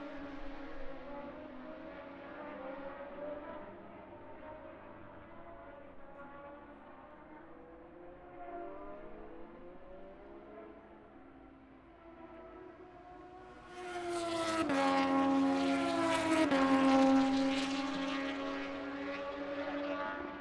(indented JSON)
{
  "title": "Towcester, UK - british motorcycle grand prix 2022 ... moto two ...",
  "date": "2022-08-05 10:55:00",
  "description": "british motorcycle grand prix 2022 ... moto two free practice one ... zoom h4n pro integral mics ... on mini tripod ...",
  "latitude": "52.07",
  "longitude": "-1.01",
  "altitude": "157",
  "timezone": "Europe/London"
}